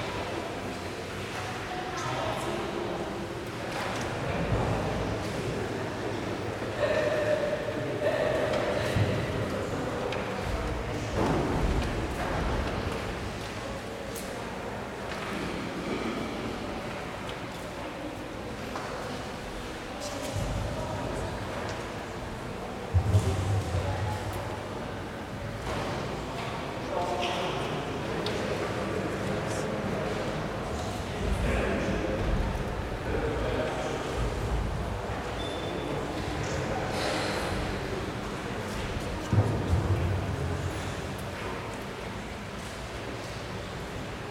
church ambience, Rovinj
sounds of tourists wandering through the church in Rovinj